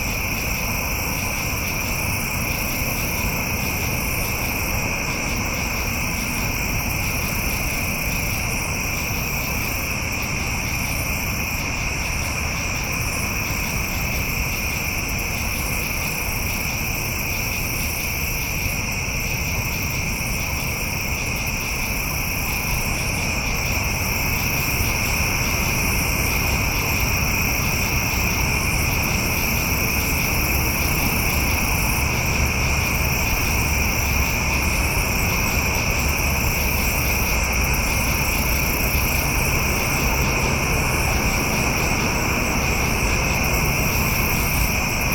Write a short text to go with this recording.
Insects swarm the forests in the suburbs of Chicago.